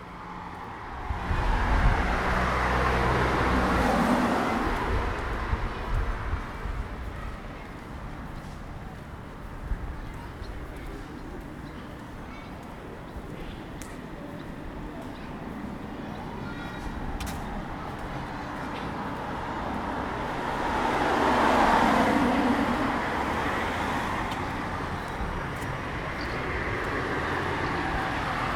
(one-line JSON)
{"title": "alter Meßplatz, Mannheim - Kasimir Malewitsch walk, eight red rectangles", "date": "2017-07-30 20:08:00", "description": "skating, playing, sun, wind, clouds", "latitude": "49.50", "longitude": "8.47", "altitude": "94", "timezone": "Europe/Berlin"}